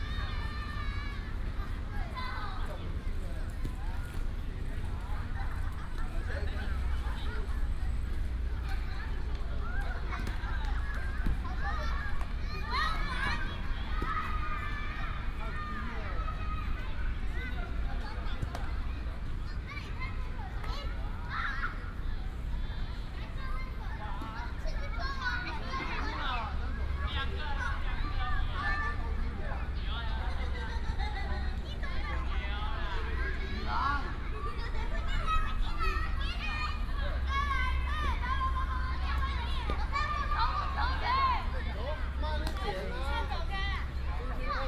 National Chung Hsing University, Taichung City - holiday
holiday, Many families are on the grass
29 April, ~16:00